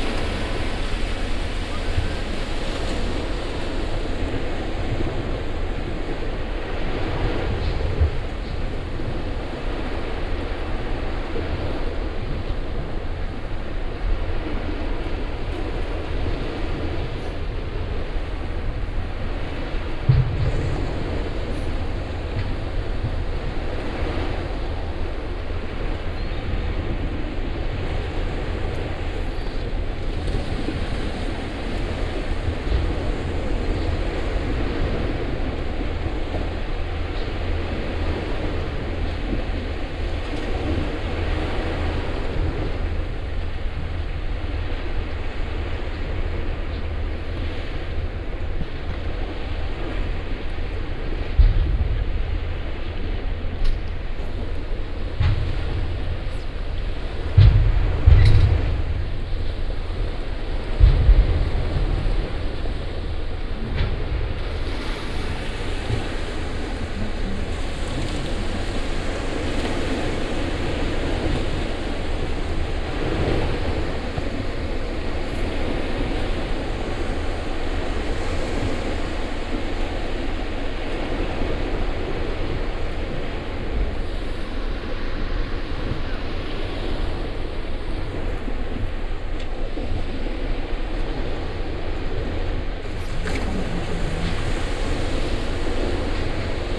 carro, beach, seaside
At the beach in the early morning. The sound of the sea waves, seagulls and the sonorous drum of big garbage cans that are emptied in this early daytime.
international ambiences and scapes and holiday sound postcards
Martigues, France